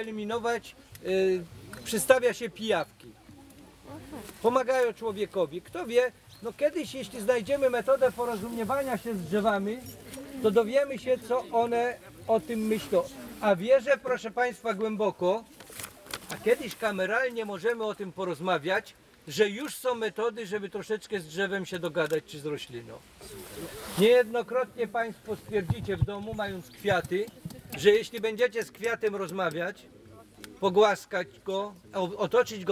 Poczopek, Silvarium, Polska - Opowieść o soku brzozowym, cz.2
opowieść o tym jak się pozyskuje sok z drzewa brzozowego
Polska, European Union